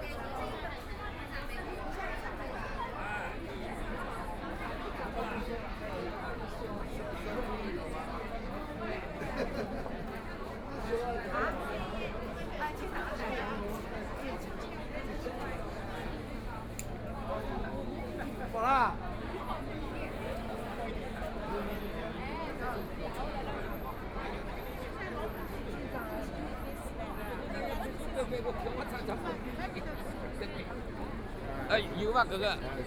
the People's Park, Shanghai - Blind message
in the Square of the Park gathered a lot of people, Blind message Share, Binaural recording, Zoom H6+ Soundman OKM II